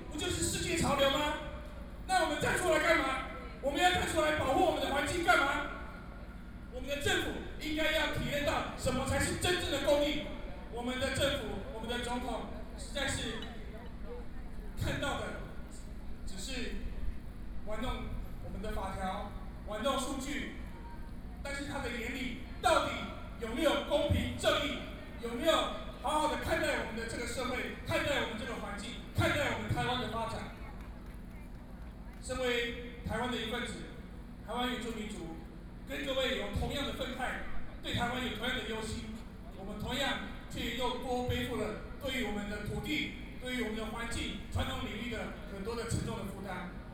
Different professionals are speeches against nuclear power, Zoom H4n+ Soundman OKM II